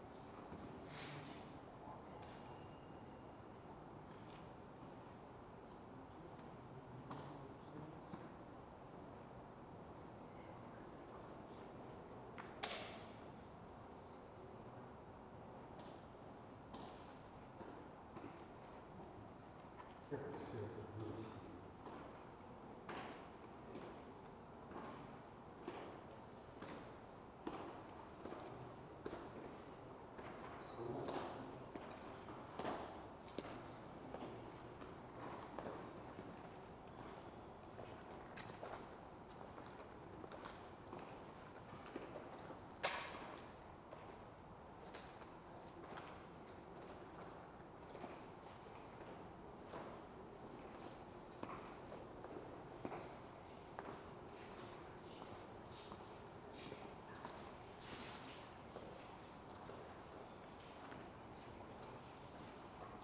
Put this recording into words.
cathedrale de cadiz, bajo, Kathedrale, Grabkammern, andalucia, schritte, flüstern